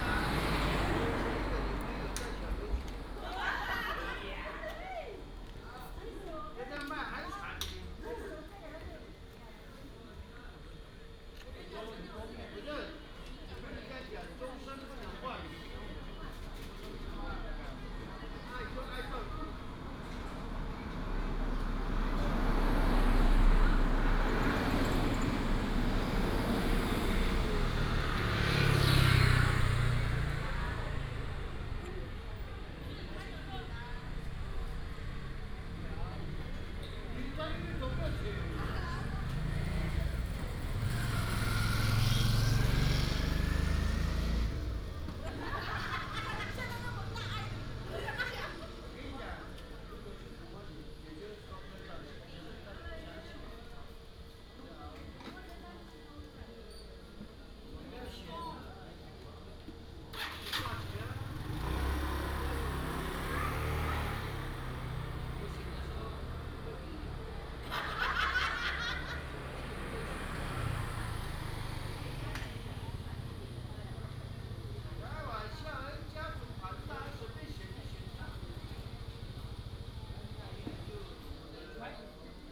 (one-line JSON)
{"title": "金崙, 台東縣太麻里鄉 - In the tribal main street", "date": "2018-04-05 19:27:00", "description": "In the tribal main street, Many people go back to the tribe for consecutive holidays, Paiwan people", "latitude": "22.53", "longitude": "120.96", "altitude": "44", "timezone": "Asia/Taipei"}